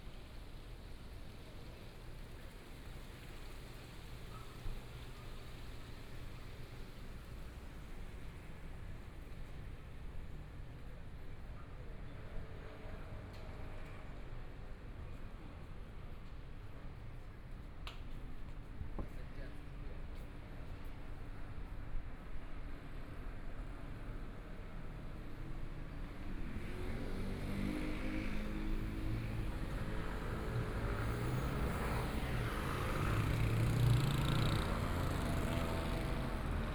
Nong'an St., Zhongshan Dist. - walking in the Street
walking in the Nong'an St.., Traffic Sound, toward Songjiang Rd., Binaural recordings, Zoom H4n+ Soundman OKM II